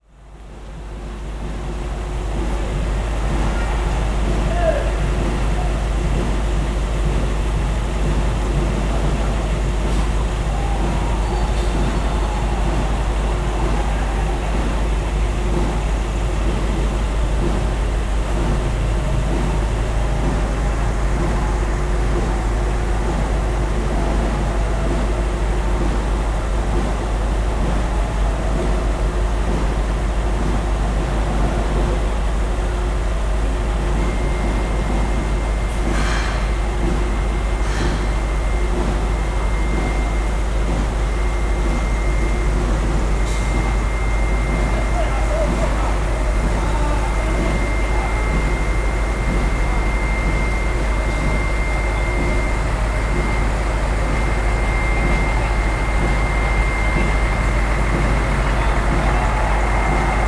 {"title": "Kallang, Singapur, Horne rd. - drone log 16/02/2013 horne rd. stadium", "date": "2013-02-16 22:21:00", "description": "horne road stadium, engine and soccer game\n(zoom h2, binaural)", "latitude": "1.31", "longitude": "103.86", "altitude": "7", "timezone": "Asia/Singapore"}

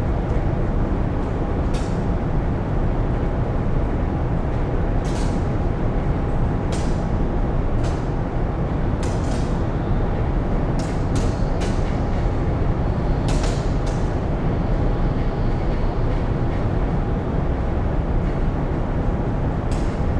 {"title": "Oberkassel, Düsseldorf, Deutschland - Düsseldorf, Opera rehearsal stage, studio 3", "date": "2012-12-15 15:30:00", "description": "Inside the rehearsal building of the Deutsche Oper am Rhein, at studio 3.\nThe sound of the room ventilation plus\nThe sound of the room ventilation with accent sounds from the roof construction as water bladders unregular on the top.\nThis recording is part of the intermedia sound art exhibition project - sonic states\nsoundmap nrw -topographic field recordings, social ambiences and art places", "latitude": "51.24", "longitude": "6.74", "altitude": "40", "timezone": "Europe/Berlin"}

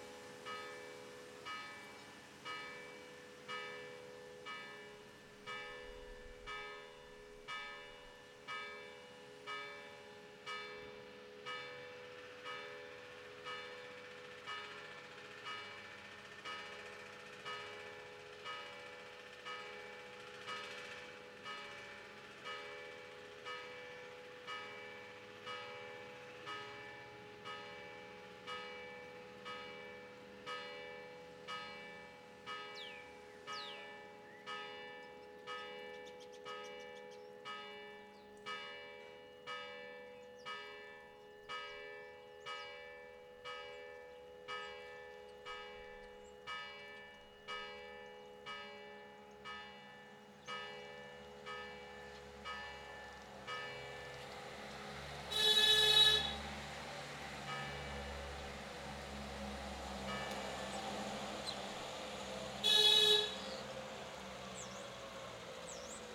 {"title": "C. Morales, Cogollos de la Vega, Granada, Espagne - Cogollos Vega - Andalousie - été 2015", "date": "2015-08-20 10:00:00", "description": "Cogollos Vega - Andalousie\nAmbiance estivale août 2015", "latitude": "37.27", "longitude": "-3.58", "altitude": "984", "timezone": "Europe/Madrid"}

